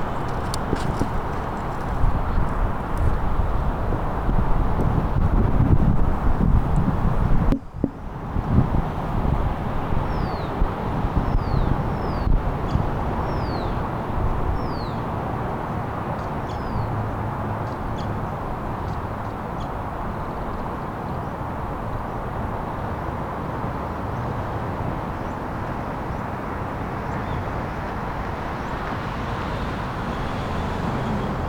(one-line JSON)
{"title": "Montreal: Pullman street Tunnel - Pullman street Tunnel", "date": "2009-03-17 15:00:00", "description": "equipment used: Korg Mr 1000", "latitude": "45.47", "longitude": "-73.60", "altitude": "33", "timezone": "America/Montreal"}